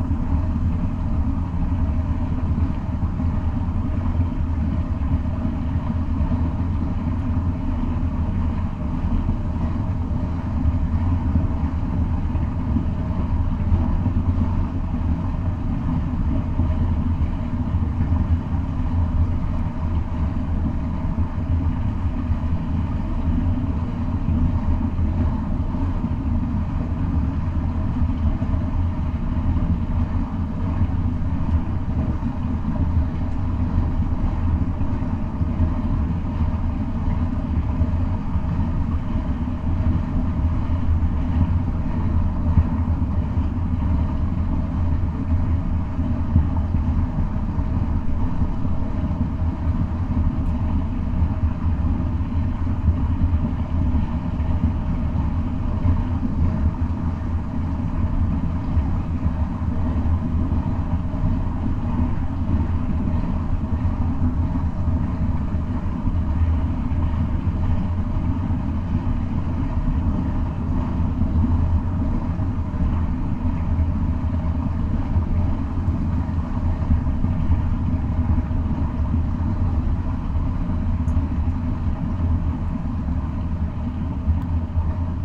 water frowing out pf the dam. mics at pipe's mouth